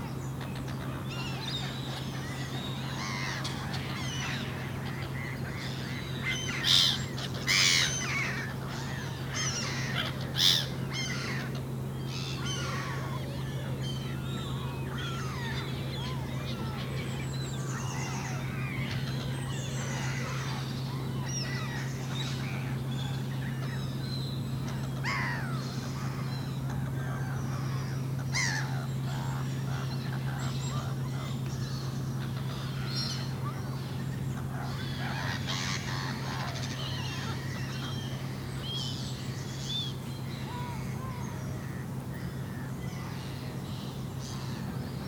Léry, France - Seagulls
Seagulls are discussing on the pond, early morning.
Lery, France